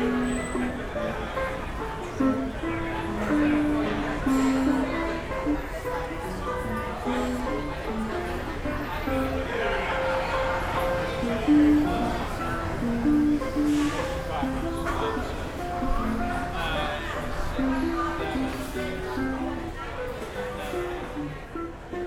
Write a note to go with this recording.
*Best with headphones* Eating, drinking, listening and people-watching at Cafe du Monde in NOLA. Street performer, traffic, dishes, talking, laughing, CA-14(quasi binaural) > Tascam DR100 MK2